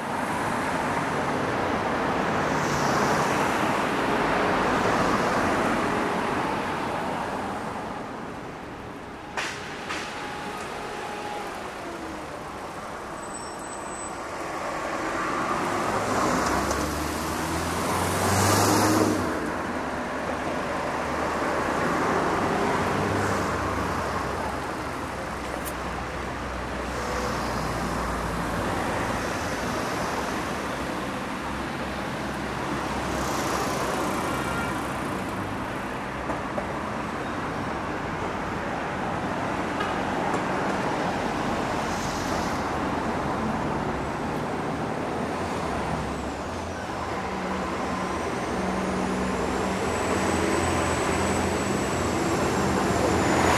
Fullmoon Nachtspaziergang Part II
Fullmoon on Istanbul, walking uphill through Fulya.